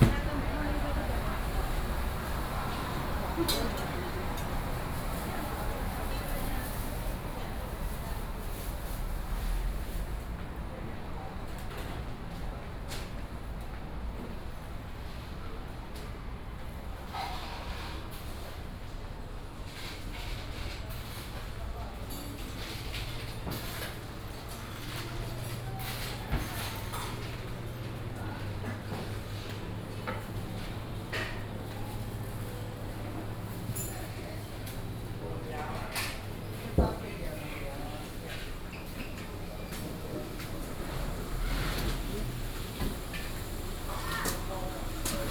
Ln., Sec., Wenhua Rd. - Walking through the traditional market
Walking through the traditional market
Binaural recordings, Sony PCM D50